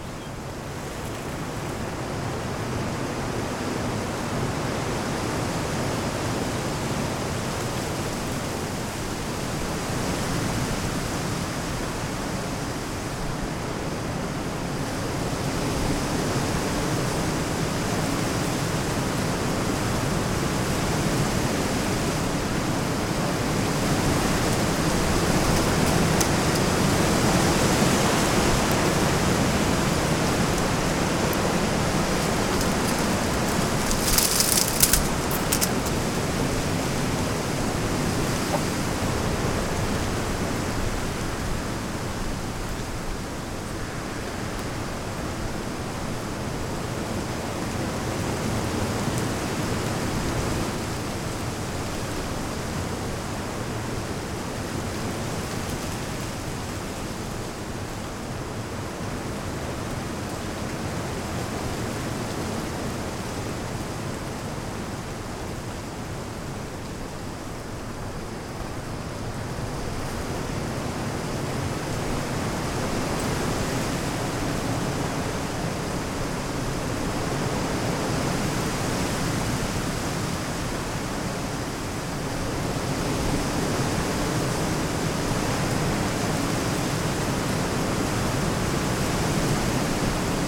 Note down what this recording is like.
Sur le chemin du phare de Ploumanac'h, début de la nuit, beaucoup de vent dans les arbres, toute les branchent bougent. On the lighthouse path, after sunset, a lot of wind on the trees makes branchs movent. /Oktava mk012 ORTF & SD mixpre & Zoom h4n